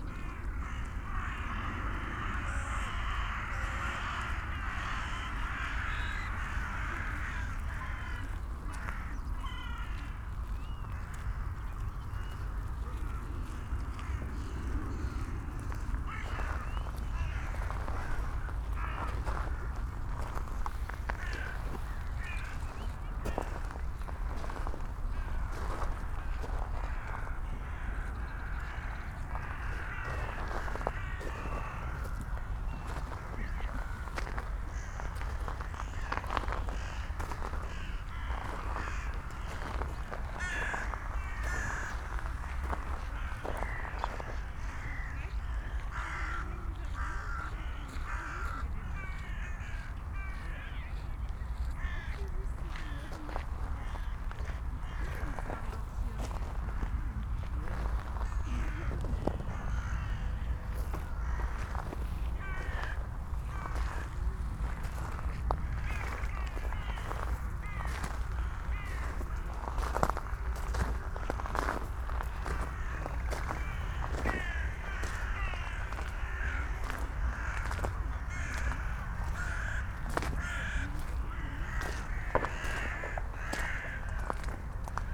Tempelhofer Feld, Berlin, Deutschland - dun crows gathering
walking around, hundreds of dun crows (Nebelkähen) and a few rooks (Saatkrähen) gathering on this spot of Tempelhofer Feld. For not always clear reasons, e.g. fireworks, hikers clapping, they get very excited from time to time. Interesting variations of calls and other sounds.
(Sony PCM D50, DPA4060)